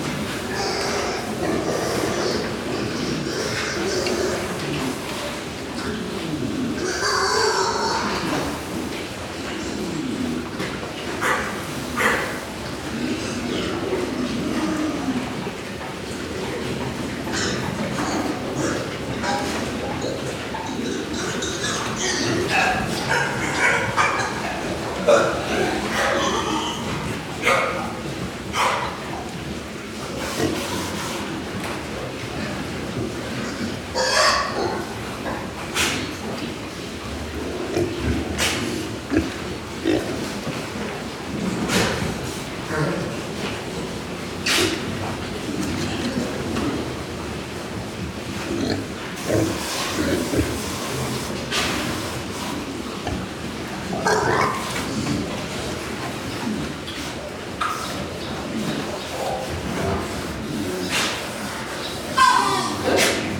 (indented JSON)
{
  "title": "SBG, Mas Reig - Granja de cerdos",
  "date": "2011-08-09 20:00:00",
  "description": "Ambiente en el interior de la granja.",
  "latitude": "41.99",
  "longitude": "2.16",
  "altitude": "833",
  "timezone": "Europe/Madrid"
}